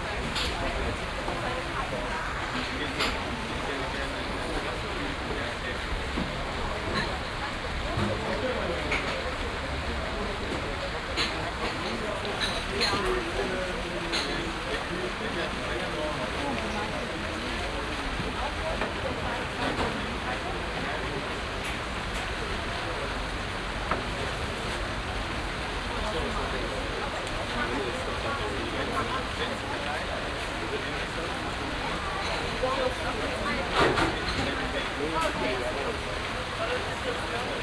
Michaelkirchplatz, Engelbecken - Cafe, Springbrunnen / fountains
busy cafe, sound of fountains
Berlin, Germany, August 2009